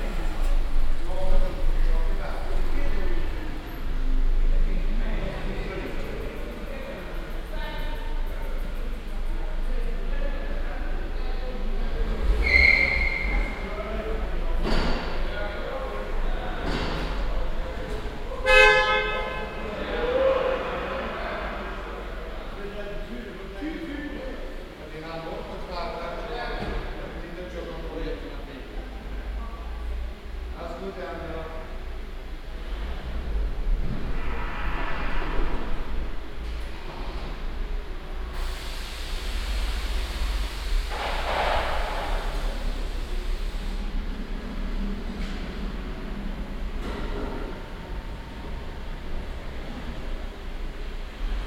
wilwerwiltz, station de controlee
At the regional association for the technical inspection of cars. A long row of cars waiting for the inspection. The sound of the control routine recorded inside of the inspection hall.
Wilwerwiltz, Kontrollstation
Bei der regionalen Filiale der technischen Kontrollstation für Autos. Eine lange Reihe von Autos wartet auf die Inspektion. Das Geräusch von der Kontrollroutine, aufgenommen in der Inspektionshalle.
Wilwerwiltz, station de contrôle
Chez l’association régionale pour le contrôle technique des véhicules. Une longue file de voitures attend pour le contrôle. Le bruit de la routine du contrôle enregistré dans le hall d’inspection.
Lellingen, Luxembourg, 13 September 2011